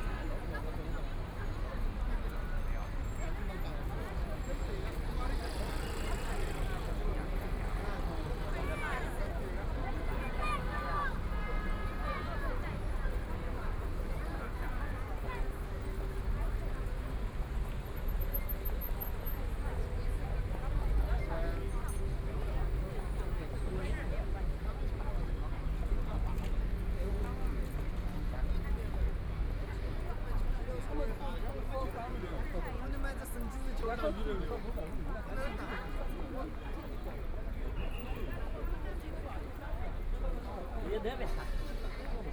Fuzhou Road, Shanghai - soundwalk
Walking in the street, Traffic Sound, Street, with moving pedestrians, Binaural recording, Zoom H6+ Soundman OKM II